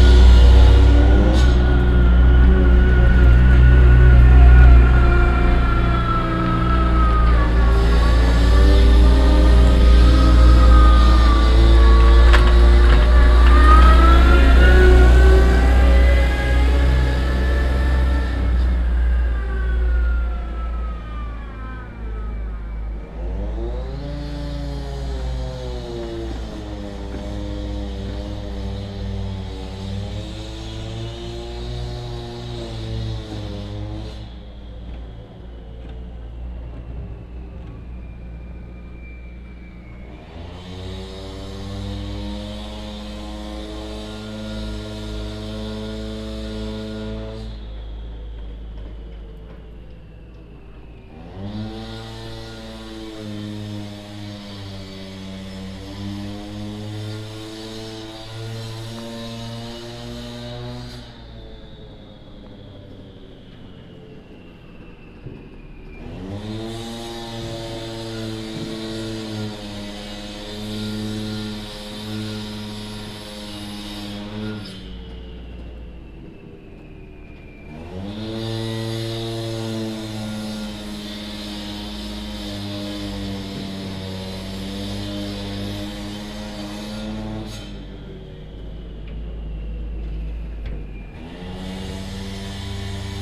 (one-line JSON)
{"title": "walsdorf, gaardewee, street construction", "date": "2011-09-18 16:25:00", "description": "In the village at noon. The sound of a passing by construction wagon and other engines that are busy working on a new street surface.\nWalsdorf, Gaardewee, Straßenarbeiten\nGegen Mittag im Dorf. Das Geräusch von einem vorbeifahrendem Baufahrzeug und andere Motoren, die fleißig an einem neuen Straßenbelag arbeiten.\nWalsdorf, Gaardewee, travaux urbains\nMidi au village. Le bruit d’un véhicule de travaux qui passe et d’autres engins occupés à réaliser un nouveau revêtement pour la chaussée.", "latitude": "49.93", "longitude": "6.17", "altitude": "395", "timezone": "Europe/Luxembourg"}